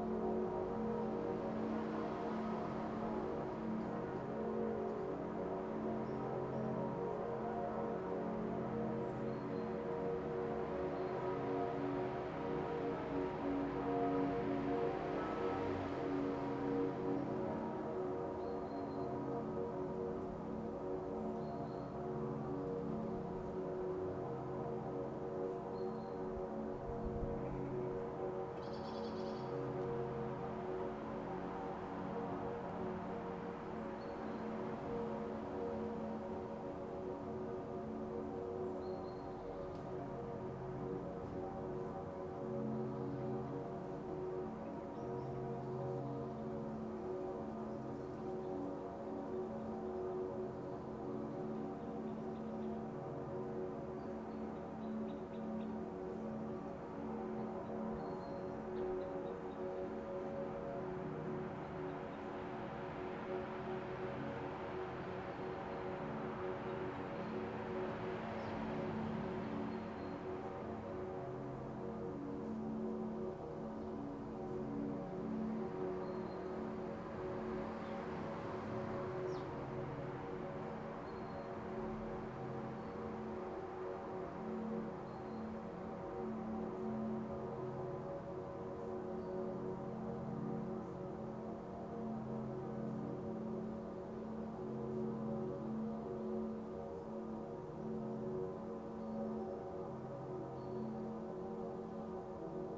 Hildesheim, Deutschland - Kirchengeläut zweiter Advent
Das Kirchengeläut zum zweiten Advent mischt sich zu einem einzigen Klang, gehört von oberhalb der Stadt. Unterbrochen durch den 10-Uhr-Schlag der Moritzberger Kirchen. Strahlend blauer Himmel, etwa 0°C und Raureif.
Church bells on second advent mixed into one sound, heard from above the city. Clear blue sky, around 0°C, hoarfrost.
Recording: Zoom H2